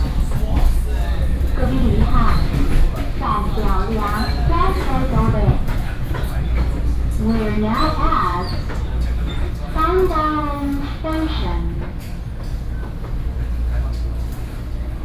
Ruifang, New Taipei City - Inside the train
Inside the train, Ordinary EMU, Sony PCM D50 + Soundman OKM II